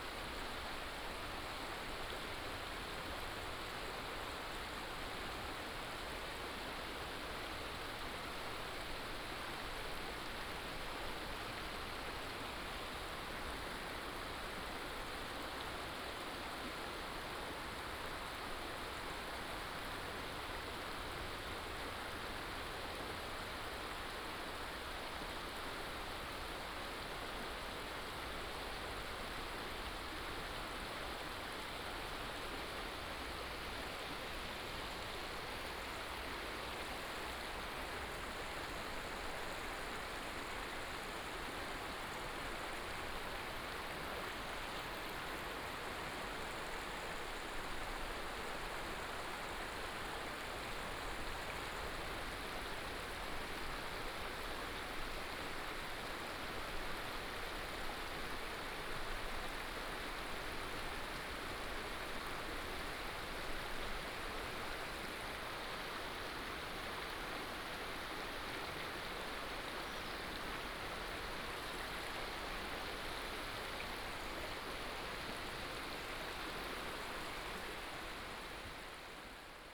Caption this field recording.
Stream sound, On the river bank